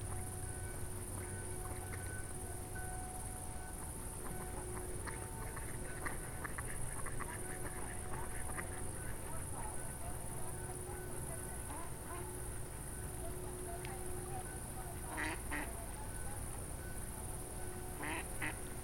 walk, Piramida, Maribor, Slovenia - walk
descent walking, Piramida, twilight forest ambience